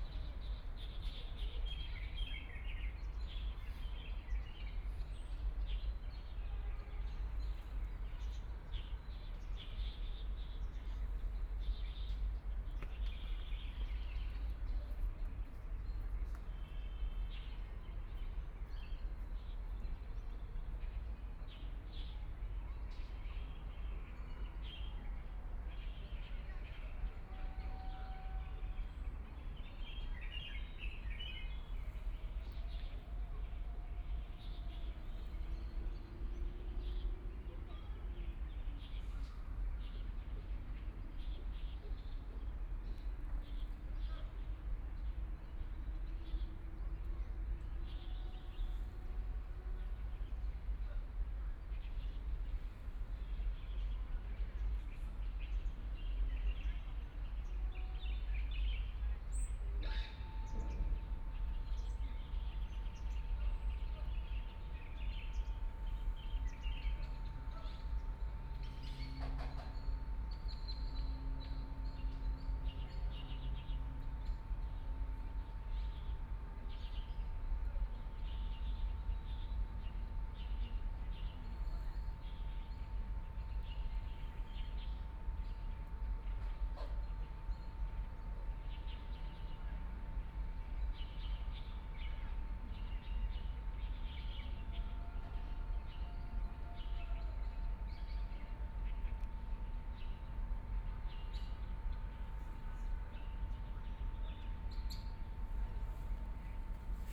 Huangxing Park, Shanghai - in the park
Birdsong, Aircraft flying through, Distant ship whistles, Binaural recording, Zoom H6+ Soundman OKM II ( SoundMap20131122- 2 )